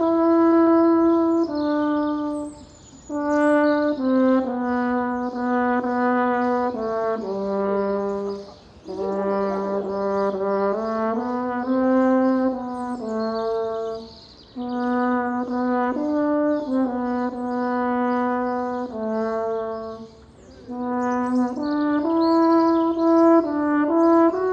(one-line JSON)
{"title": "Cottastraße, Berlin, Germany - Horn concert for the residential home; player in the garden, residents on their balconies.", "date": "2020-04-10 16:46:00", "description": "Horn concert for those living in this residential home and their carers. The weather was beautiful. The player played from the front garden and the residents came onto their balconies to listen. I guess this would have happened inside but for the Covid-19 restrictions. But it meant those passing in the street could appreciate it too.", "latitude": "52.57", "longitude": "13.39", "altitude": "43", "timezone": "Europe/Berlin"}